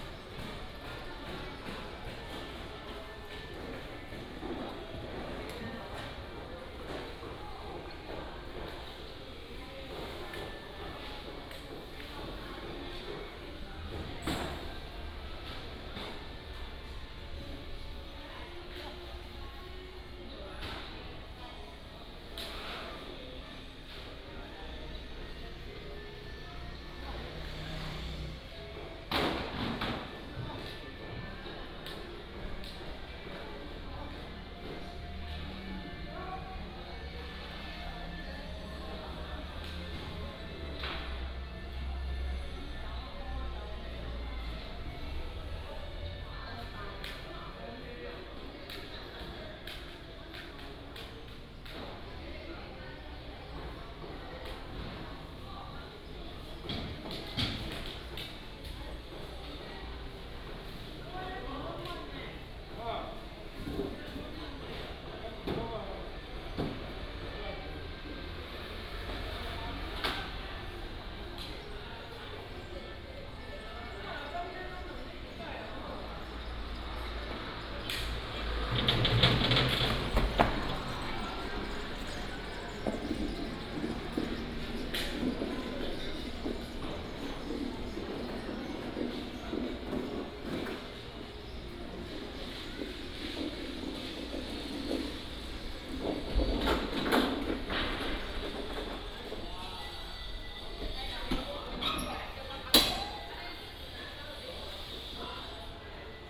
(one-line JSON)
{"title": "友愛市場, Tainan City - in the traditional market", "date": "2017-02-18 09:53:00", "description": "Walking in the traditional market, Is preparing for rest", "latitude": "22.99", "longitude": "120.20", "altitude": "12", "timezone": "GMT+1"}